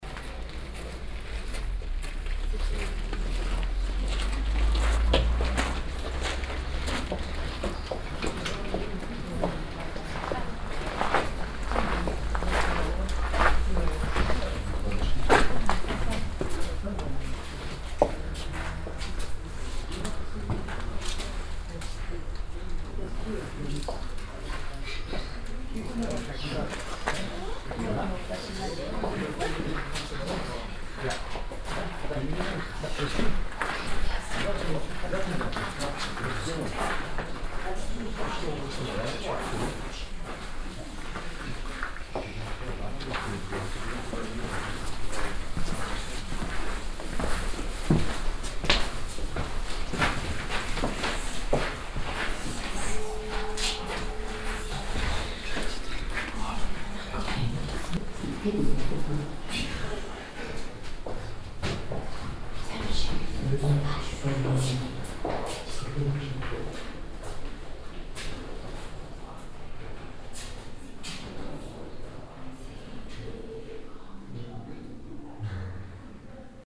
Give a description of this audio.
In the evening. Walking on the gravel path to the abbey church accompanied by some other visitors. Clervaux, Abtei St. Mauritius, Kiesweg, Am Abend. Auf dem Kiesweg zur Abteikirche laufend, begleitet von einigen Besuchern. Clervaux, abbaye Saint-Maurice, chemin en graviers, Le soir. Marche sur le chemin en gravier vers l’église de l’abbaye, accompagné de quelques visit, Project - Klangraum Our - topographic field recordings, sound objects and social ambiences